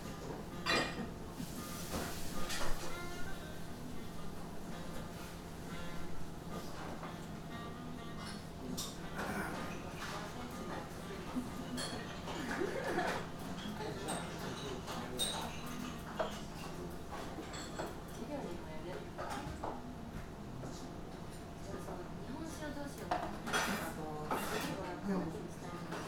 Tokyo, Taitō district, Ootoya restaurant - at the table
one of my favorite places sound-wise from my trip to Japan. Waiting for my dinner at Ootoya restaurant. Jazz music (played in many restaurants in Japan, even the really cheap ones, from what i have noticed), rattle from the kitchen, hushed conversations, waitresses talking to customers and serving food, customers walking in and out, a man eating his food loudly - slurping and grunting.
北葛飾郡, 日本, 28 March